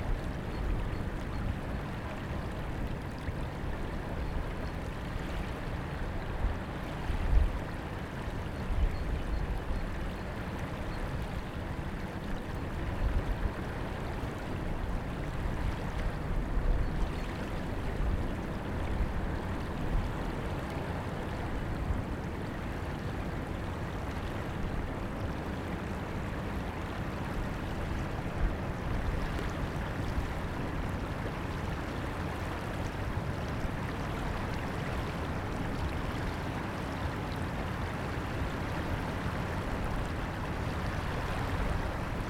Langel, Köln, Deutschland - Plätschern am Rhein / Lapping River Rhine
Plätscherndes Rheinwasser beim Überlaufen der Buhne, im Hintergrund ein vorbeifahrendes Schiff und das Dauerrauschen der Raffinerien um Wesseling.
Rippling water of the Rhine overflowing a groyne, in the background a passing ship and the noise of the refineries of Wesseling.